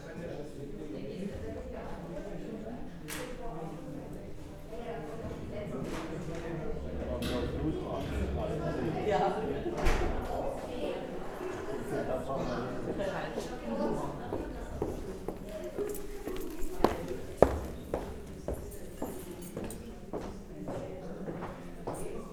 berlin, rütlistraße: rütli-schule - the city, the country & me: rütli school
polling place in a classroom of the famous rütli school
the city, the country & me: february 13, 2011
Berlin, Deutschland, 13 February 2011